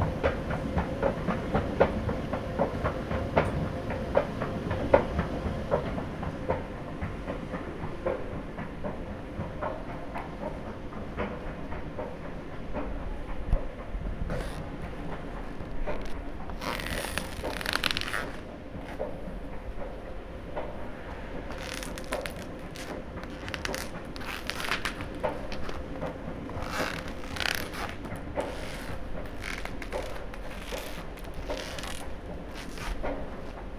Going up the escalator in the Musée d'Art Moderne et Contemporain in Strasbourg on a sunday at noon. The escalator appeared as the heart of the museum as its characteristic rythmical sound was audible almost everywhere in the building. From the escalator you turn right and cross a transition profile connecting two types of flooring. When you step on the profile the plastic material emits various kinds of squeaky sounds. Recorded with an Olympus LS 12 Recorder using the built-in microphones. Recorder hand held, facing slightly downwards.